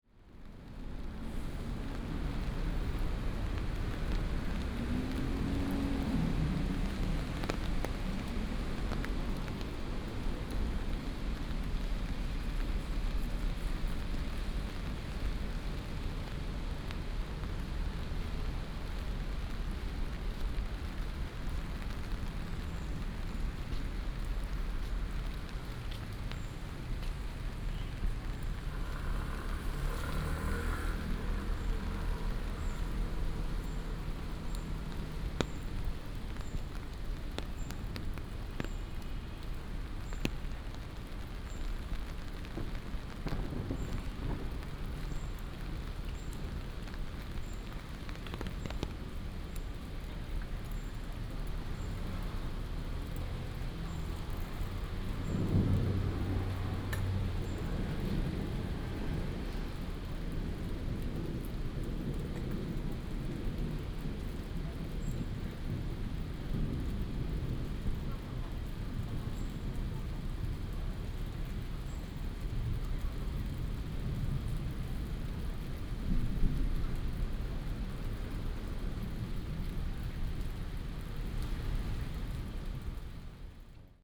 Ln., Sec., Xinyi Rd., Da’an Dist., Taipei City - Walking in the rain
Walking in the rain, Raindrop sound, Thunder